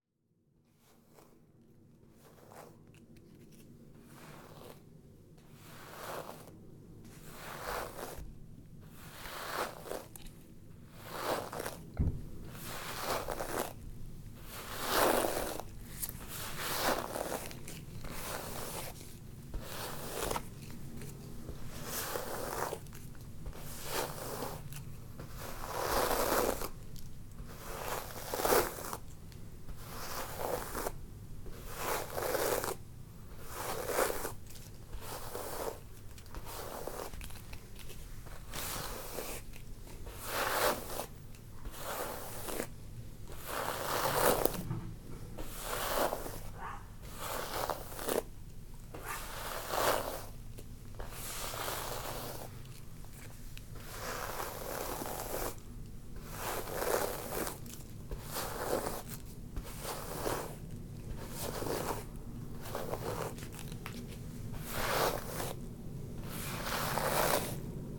Therapia Rd., London, UK - Brushing Hair with Window Open
Recorded with a pair of DPA 4060s and a Marantz PMD661